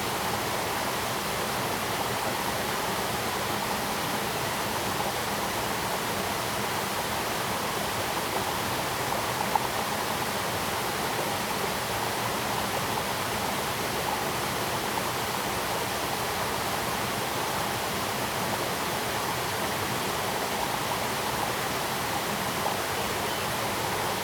茅埔坑溪, Nantou County - the stream
In the stream
Zoom H2n MS+XY